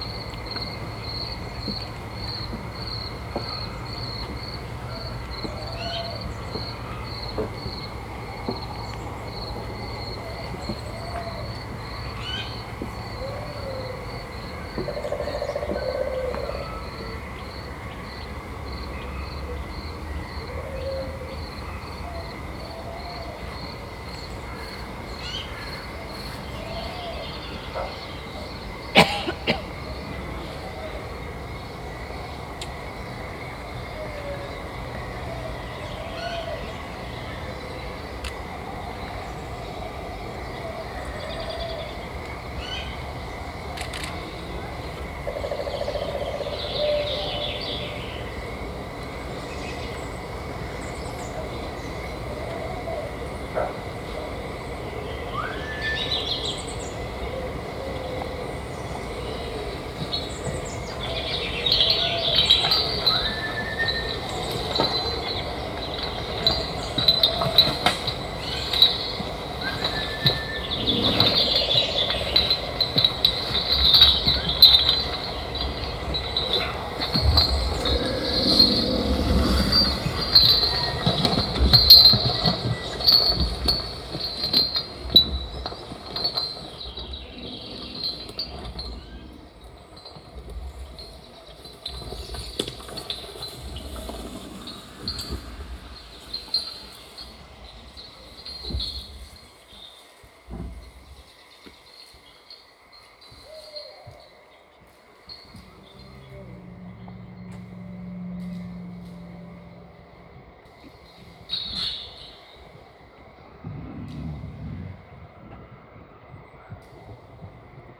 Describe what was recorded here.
In a small wood inside the Karlsaue during the documenta 13. The sound of a hörspiel like multi channel sound installation by Janet Cardiff and George Bures Miller. Also to be heard photo clicks of visitors and a child crying. soundmap d - social ambiences, art places and topographic field recordings